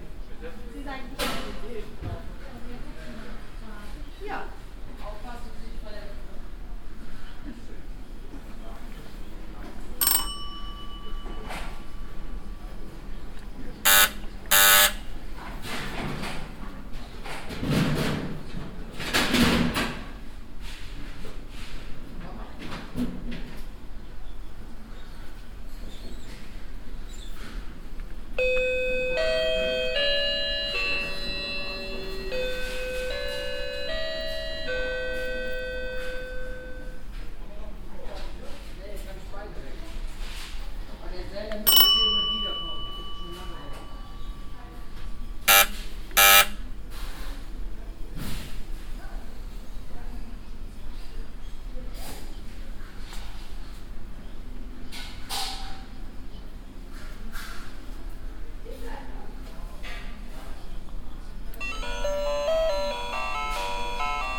bensberg, overather straße, construction market, door bells
soundmap nrw: social ambiences/ listen to the people in & outdoor topographic field recordings
Bergisch Gladbach, Germany, July 2009